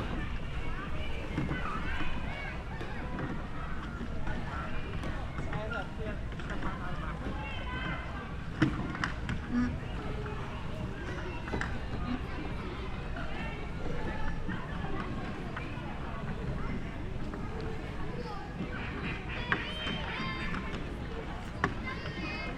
Largo do Santuário do Bom Jesus, Braga, Portugal - Lake with boats - Lake with boats
Lake with people sailling small wooden boats, Bom Jesus de Braga Sactuary. Recorded with SD mixpre6 and AT BP4025 XY stereo microphone.